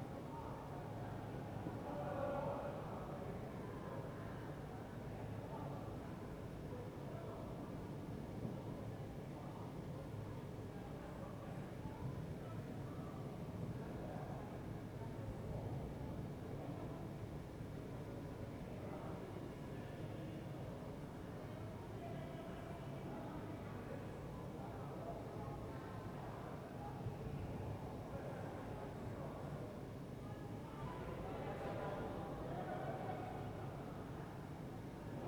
"New Year night in the time of COVID19": soundscape.
Chapter CL of Ascolto il tuo cuore, città. I listen to your heart, city
Monday December 28th 2020. Fixed position on an internal terrace at San Salvario district Turin, about seven weeks of new restrictive disposition due to the epidemic of COVID19.
Start at 11:46 a.m. end at 00:46 p.m. duration of recording 01:00:00
31 December 2020, 23:46